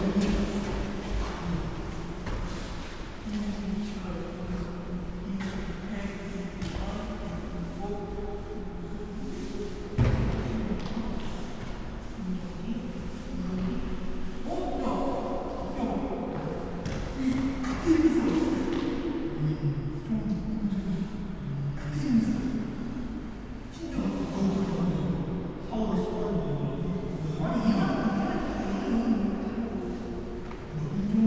A man-made grotto in a half circle shape (having a long curved wall)...this room is a memorial to those killed during the May uprising against military rule in 1980...all surfaces are hard, stone or bronze...this recording spans the time 2 separate groups visited the grotto and the quiet/empty periods surrounding those...
29 April 2022, 11:00